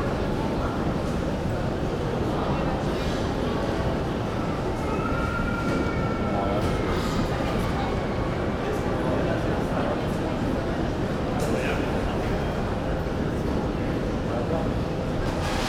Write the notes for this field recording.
ambience of the luggage hall. passengers picking up their bags. mic close to the baggage conveyor belt.